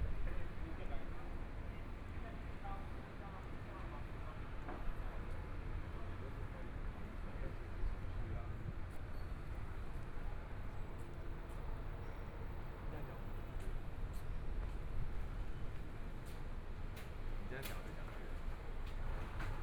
Linsen N. Rd., Zhongshan Dist. - Walking on the road
Walking on the road, Environmental sounds, Traffic Sound, Binaural recordings, Zoom H4n+ Soundman OKM II